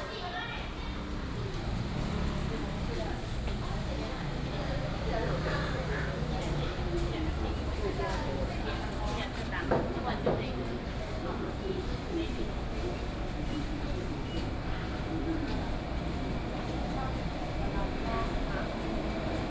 Jianguo Rd., Yingge Dist. - Walking through the traditional market
Walking through the traditional market
Binaural recordings
Sony PCM D50 + Soundman OKM II
New Taipei City, Taiwan, 20 June, 07:25